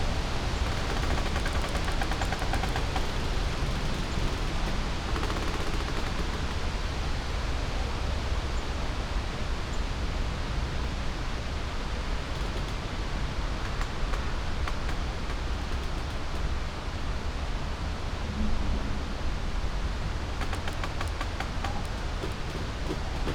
poplar woods, Drava river, Slovenia - creaks, winds, distant traffic hum